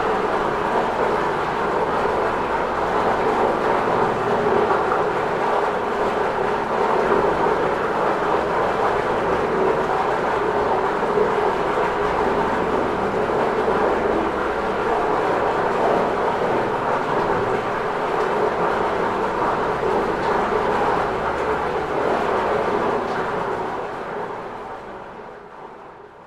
Chem. de la Roselière, Aix-les-Bains, France - bruit aquatique
Station de relevage petit bâtiment cylindrique écoré d'une peinture murale, avec des ouies permettant d'entendre ce qui se passe à l'intérieur. Vers le point de départ du sentier lacustre qui mène à la Pointe de l'Ardre.
Auvergne-Rhône-Alpes, France métropolitaine, France, 6 September 2022, 12:25